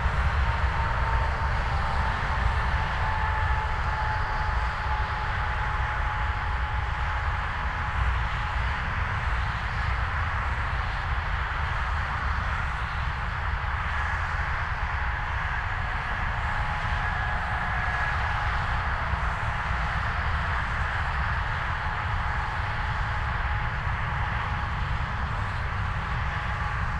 Manheim, motorway - drone of the A4
sonic energy (and violence) of the A4 motorway near village Manheim. The motorway will be moved 3km south because the nearby opencast mine Tagebau Hambach requires the area, including the village. as of today, the new Autobahn is build already and seems functional soon.
(Sony PCM D50, DPA4060)